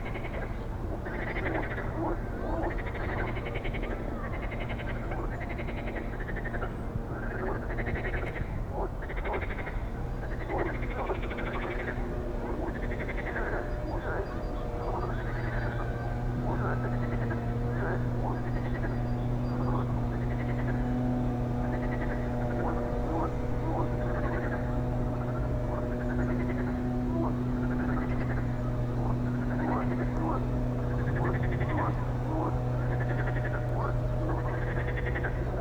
Poznan, Strzeszyn Grecki neighborhood, Homera Street - frogs and soccer match
forgs in artificial pond near a school. kids having a break between classes. some construction nearby. (sony d50)
Poznań, Poland, 23 April, ~12:00